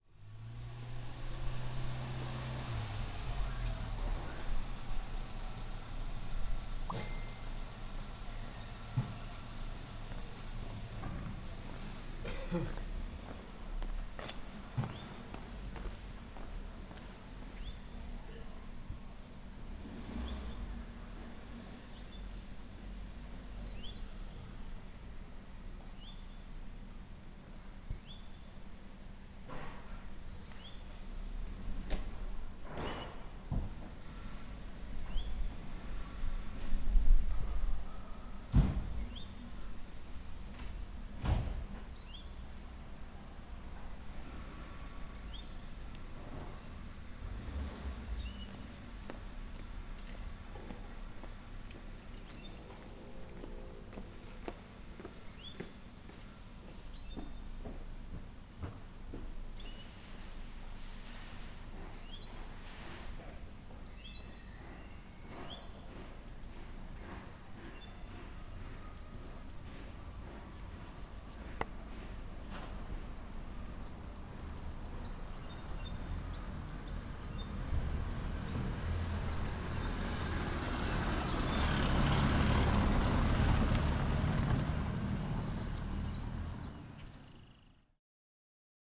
Taxiarchon, Corfu, Greece - Taxiarchon Square - Πλατεία Ταξιαρχών
Birds tweeting. Cars passing by. Even though there is not any street nearby, the engine sound levels are high.